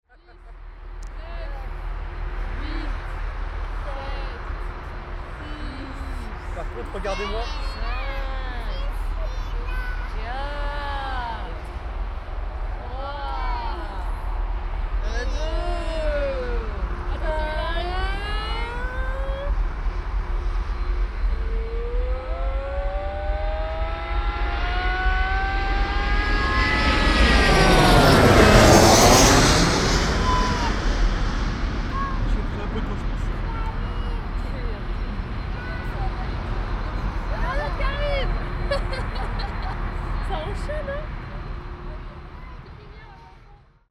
BINAURAL RECORDING (have to listen with headphones!!)
plane landing, just above your head
Gatwick, West Sussex, UK, August 2013